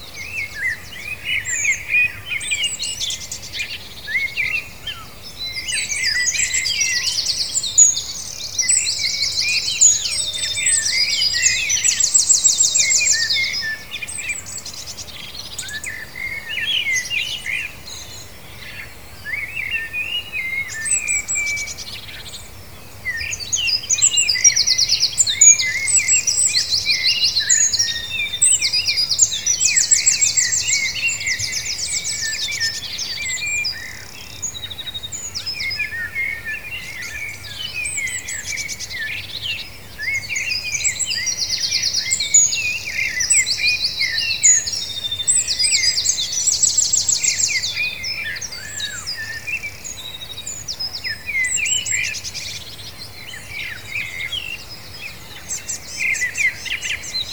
A 20 min recording of the 2020 Dawn Chorus. Recorded at The Staiths, Gateshead between the hours of 4.30am and 5.30am.
A wonderful vivid soundtrack, featuring a wide variety of bird sounds and noises erupting first thing in the morning.
England, United Kingdom, 3 May, 05:10